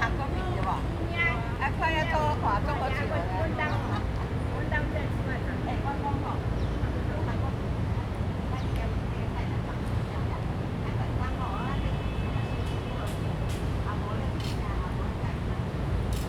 In the park, Old people, Sweep the floor
Zoom H2n MS+XY